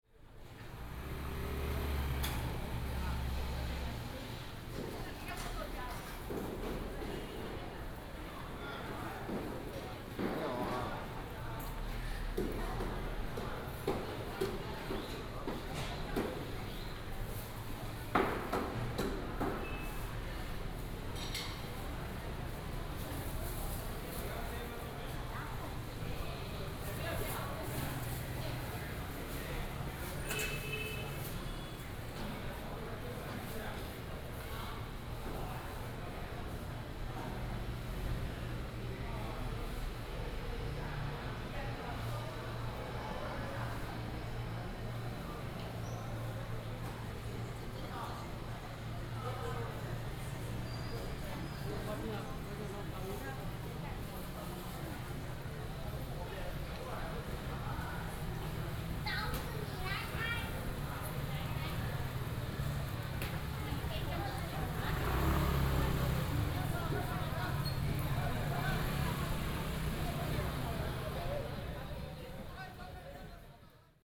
后里第一公有零售市場, Houli Dist., Taichung City - In the Market
In the Market, vendors selling sound, Few business market
22 January 2017, ~10:00